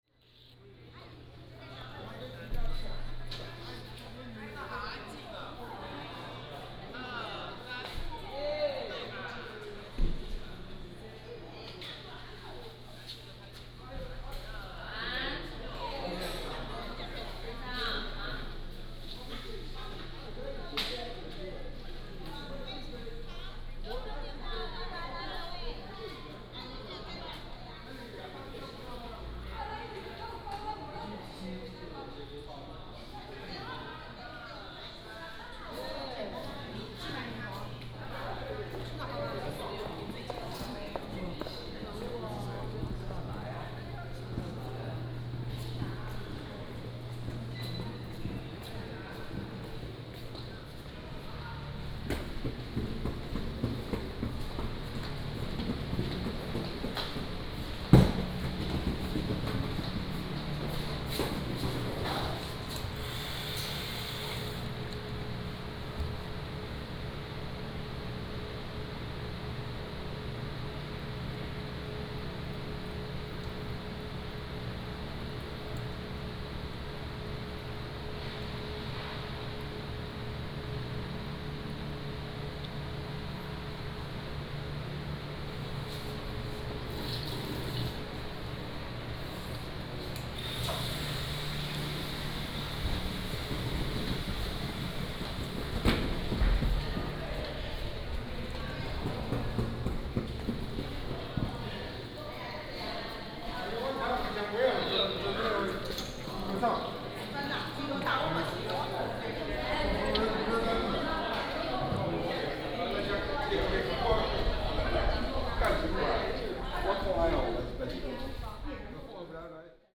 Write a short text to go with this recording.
Visitor Center, Many tourists, Wait boat